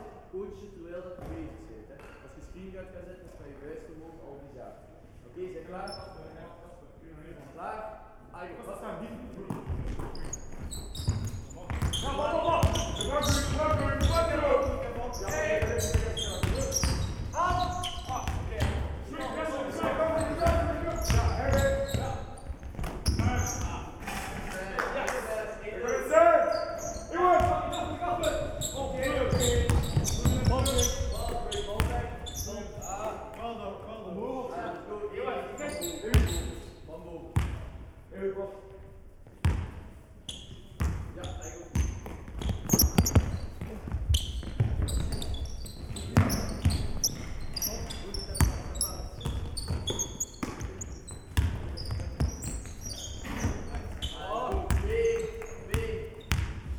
Driepikkelstraat, Gent, Belgium - Bouncing Birds